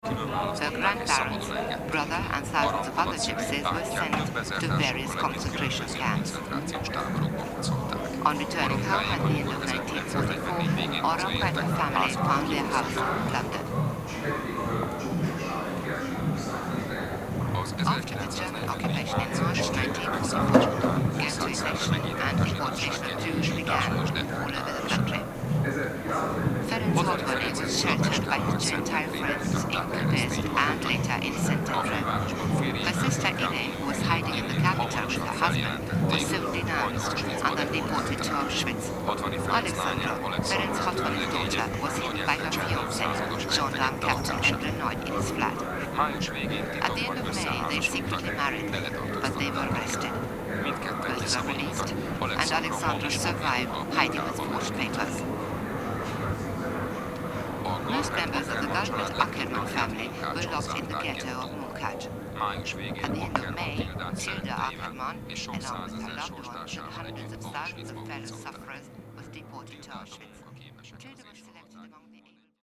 Páva St Budapest, Hungary, Europe - Holocaust Memorial Center
Recorded in the museum, using the English - Hungarian simultaneous narration provided via headphones and other voices inside the room.